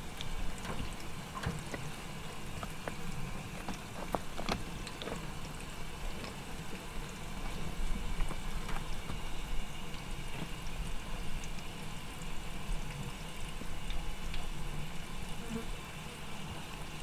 Chem. des Tigneux, Chindrieux, France - Abreuvoir à vaches
Il fait 31° les vaches viennent se désaltérer à l'abreuvoir constitué d'une ancienne baignoire, percussions avec les oreilles sur les parois, elles se bousculent pour avoir une place.